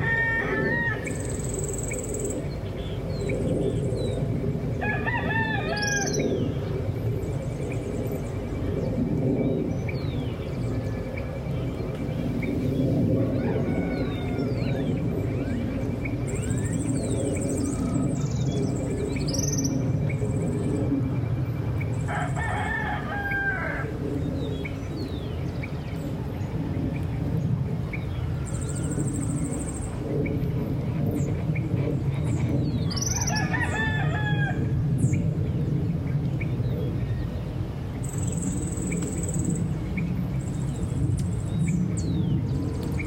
Suburban farm with a warm climate of around 20 degrees Celsius, Peñitas village in the municipality of Puente Nacional, Santander, Colombia. With abundant flora and fauna, national road Bogota- Bucaramanga, with transit to the Atlantic coast being a life of heavy traffic and airway. There are domestic animals because it is a populated environment.
10 May 2021, 05:20